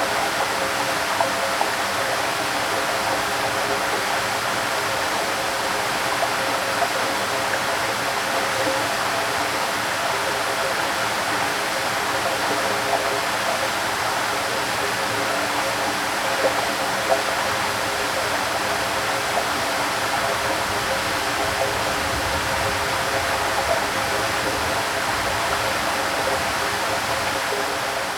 gurgling gush of water in a big, iron pipe. mics touching the surface of the pipe.
Potsdam, Germany, 1 June 2014